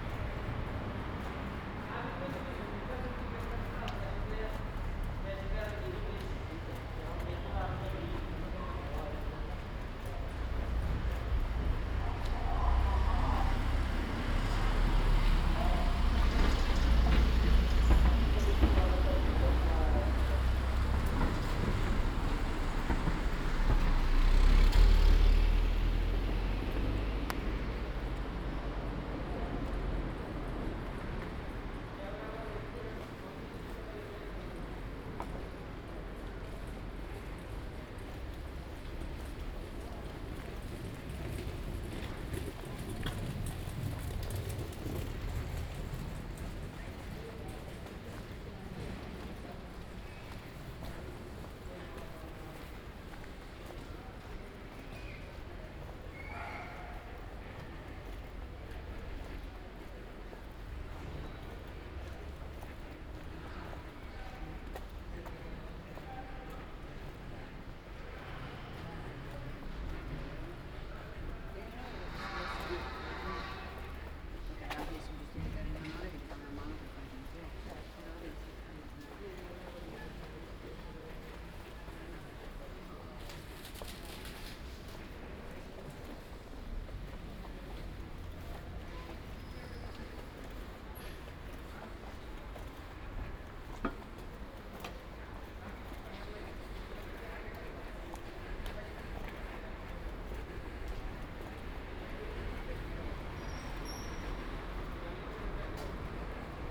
Ascolto il tuo cuore, città. I listen to your heart, city. Several chapters **SCROLL DOWN FOR ALL RECORDINGS** - Marché et gare aux temps du COVID19 Soundwalk
Chapter VII of Ascolto il tuo cuore, città. I listen to your heart, city
Saturday March 14th 2020. Crossing the open-air market of Piazza Madama Cristina, then Porta Nuova train station, Turin, and back. Four days after emergency disposition due to the epidemic of COVID19.
Start at 11:00 p.m. end at 11:44 p.m. duration of recording 43'57''
The entire path is associated with a synchronized GPS track recorded in the (kmz, kml, gpx) files downloadable here:
Torino, Piemonte, Italia, 14 March 2020